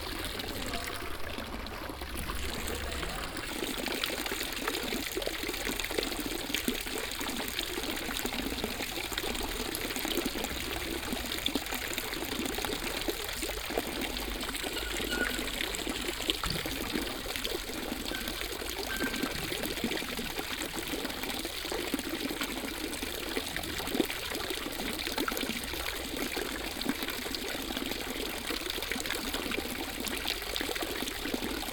rudolstadt, market street, fountain
At one of the many street fountains in the village site. The sound of the dripping water. In the background two young bmx cyclists trying some driving tricks.
soundmap d - topographic field recordings and social ambiences
Rudolstadt, Germany, 6 October 2011, 14:17